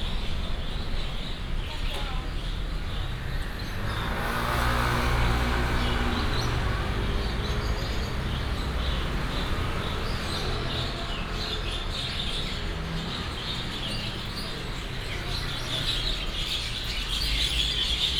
Guangfu Rd., Central Dist., Taichung City - Bird shop
Bird shop, Traffic sound
Central District, Taichung City, Taiwan